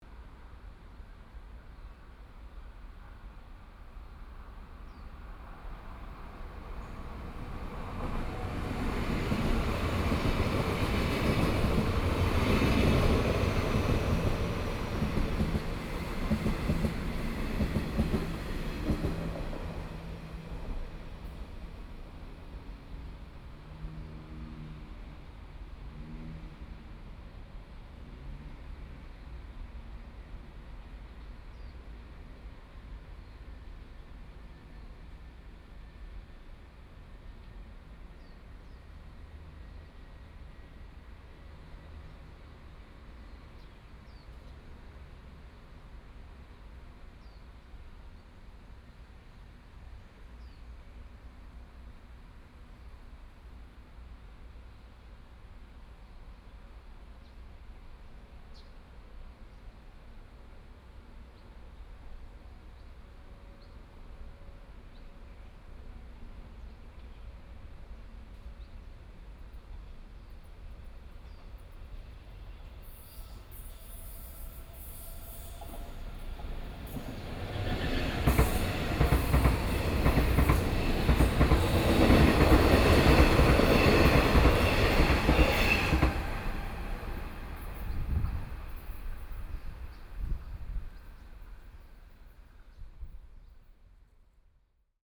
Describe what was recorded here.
Train traveling through, Sony PCM D50 + Soundman OKM II